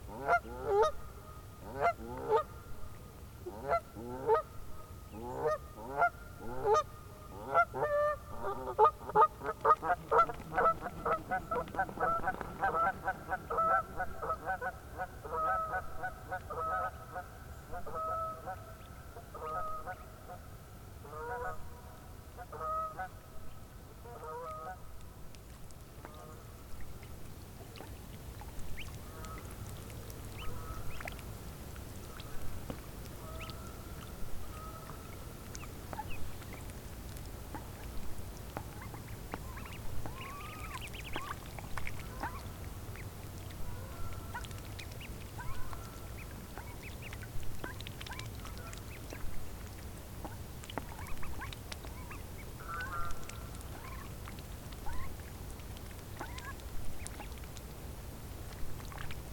Fieldrecording summer of 2014 at Rottungen in the woods of Oslo.
First the canada goose then the small ducklings with their mother.
Recorded with a Zoom H4n.
29 July 2014, ~22:00